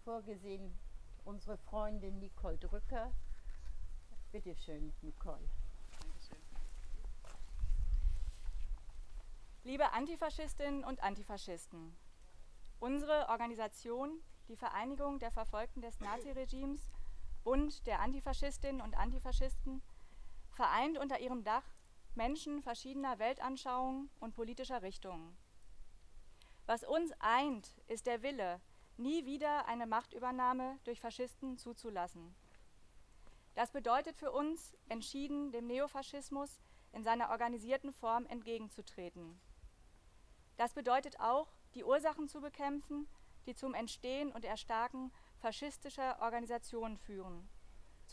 Altonaer Blutsonntag - Gedenktag Justizopfer Altonaer Blutsonntag, 01.08.2009. Teil 2
Rede von Nicole Drücker, Mitglied der VVN-BdA
Hamburg, 1 August 2009